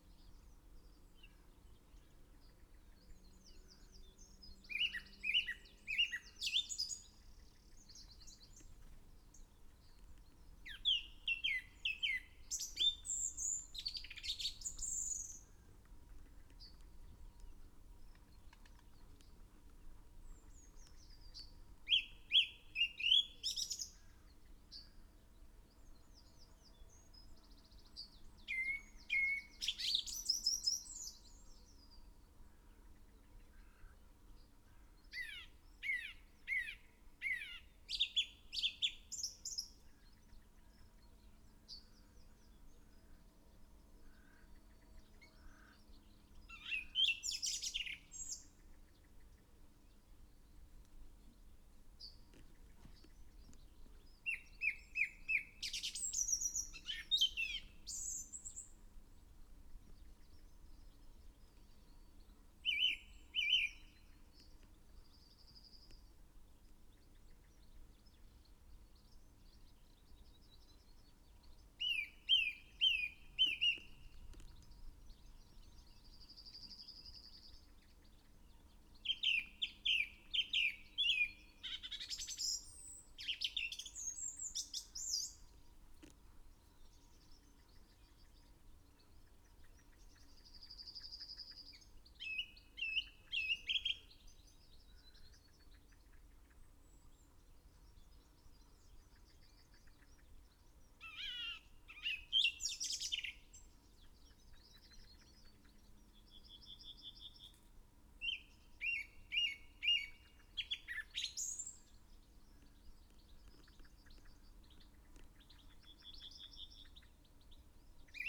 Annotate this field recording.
song thrush in song ... dpa 4060s clipped to twigs to Zoom H5 ... bird song ... calls from ... reed bunting ... yellowhammer ... wren ... blackbird ... whitethroat ... wood pigeon ... dunnock ... linnet ... tree sparrow ...